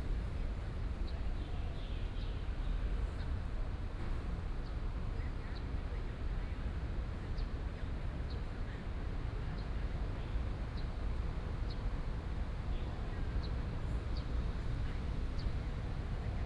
東豐公園, Da'an District, Taipei City - in the Park
Bird calls, Traffic noise, Very hot weather
Taipei City, Taiwan, 27 June 2015, ~18:00